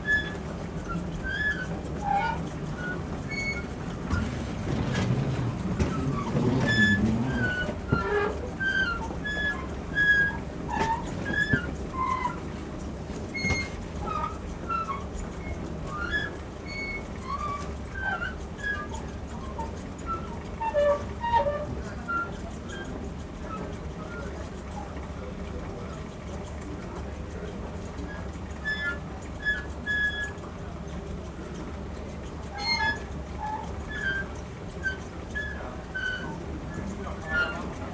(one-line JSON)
{"title": "Stansted Airport, UK - Tuneful escalator squeak", "date": "2016-05-23 07:15:00", "description": "A surprisingly melody brightening the journey to Berlin.", "latitude": "51.89", "longitude": "0.26", "altitude": "106", "timezone": "Europe/London"}